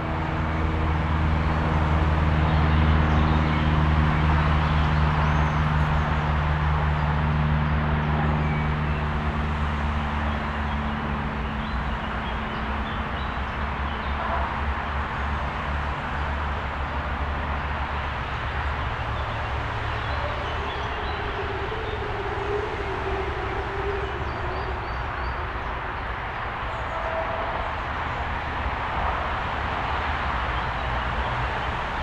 Büchelstraße, Bonn, Alemania - The Edge
Nordrhein-Westfalen, Deutschland, 14 June 2021